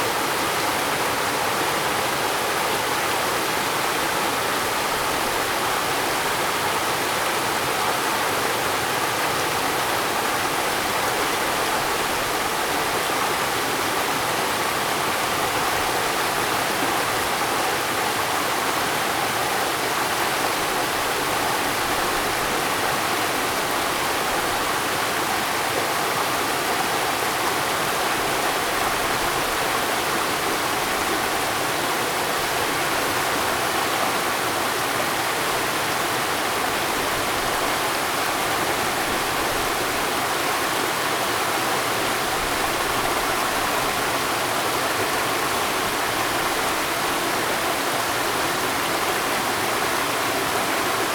五峰旗瀑布, Jiaoxi Township, Yilan County - Waterfall
Waterfalls and rivers
Zoom H2n MS+ XY